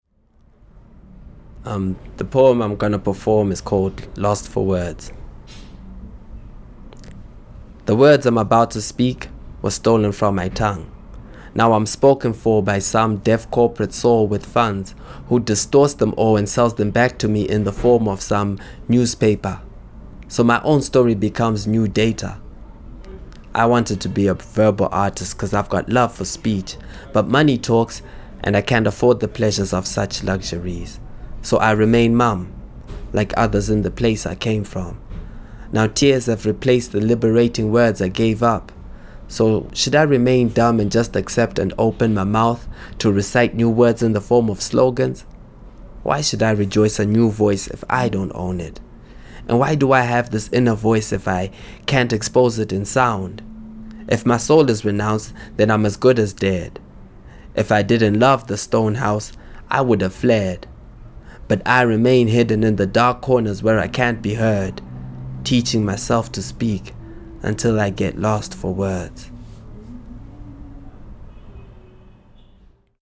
The Book Cafe, Harare, Zimbabwe - Upmost, “Lost for Words…”
Ngonidzashe Tapiwa aka Upmost performs “Lost for Words…”
some were broadcast in Petronella’s “Soul Tuesday” Joy FM Lusaka on 5 Dec 2012:
18 October 2012, 18:02